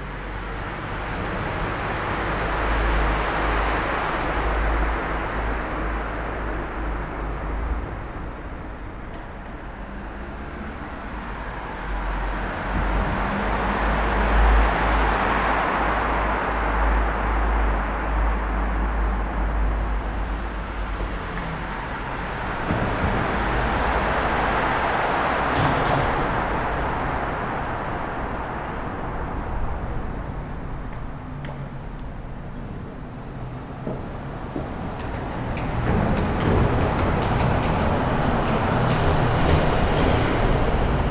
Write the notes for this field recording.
Mériadeck is the “post-apocalyptic” concrete district of Bordeaux. It was built in the 1960’s, wiping out a former working-class neighborhood that had become unhealthy.